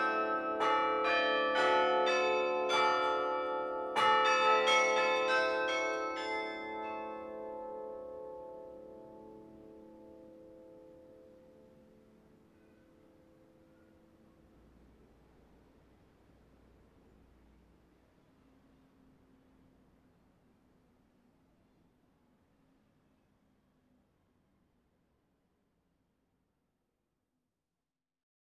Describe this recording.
Église St-Christophe - Tourcoing, Carillon, Maître carillonneur : Mr Michel Goddefroy